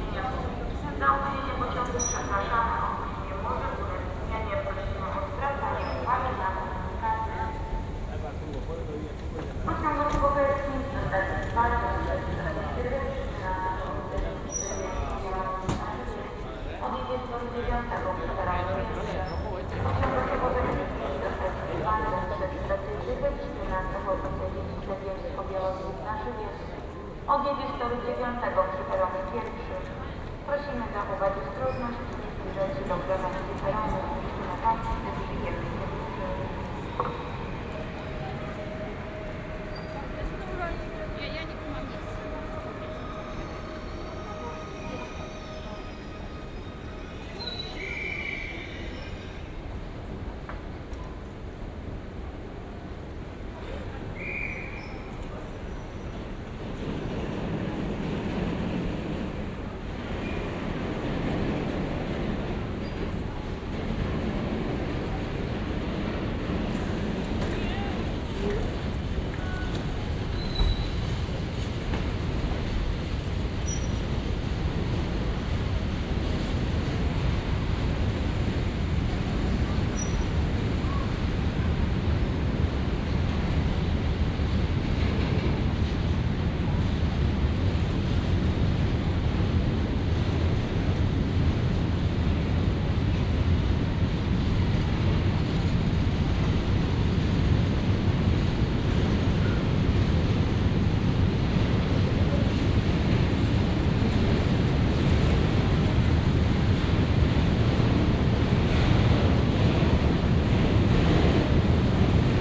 Railway Station, Katowice, Poland - (54) Train anouncements at the railway station
Train anouncements at the railway station.
binaural recording with Soundman OKM + ZoomH2n
sound posted by Katarzyna Trzeciak
2 December 2016, 17:48